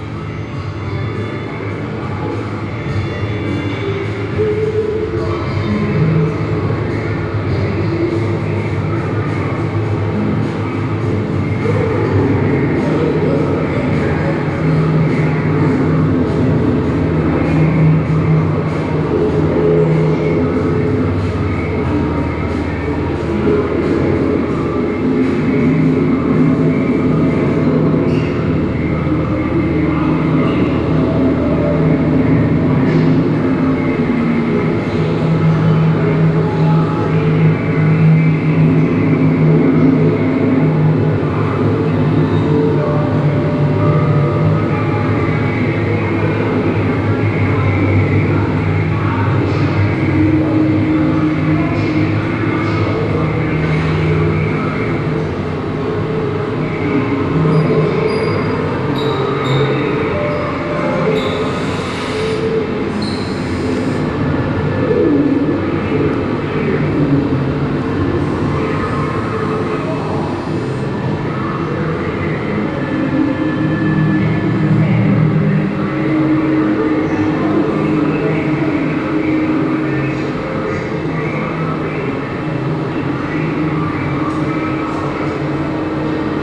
Oberkassel, Düsseldorf, Deutschland - Düsseldorf, Stoschek Collection, First Floor
Inside the Stoschek Collection on the first floor during the exhibition number six - flaming creatures. The sound of an media installation by John Bock in the wide fabric hall ambience.
This recording is part of the exhibition project - sonic states
soundmap nrw - social ambiences, sonic states and topographic field recordings